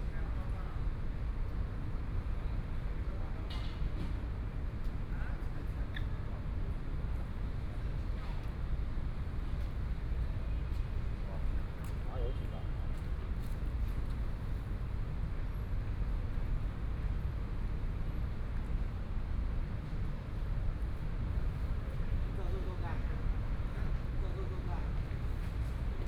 YongSheng Park, Taipei City - in the Park
Night in the park, Children, Traffic Sound, Environmental sounds
Please turn up the volume a little
Binaural recordings, Sony PCM D100 + Soundman OKM II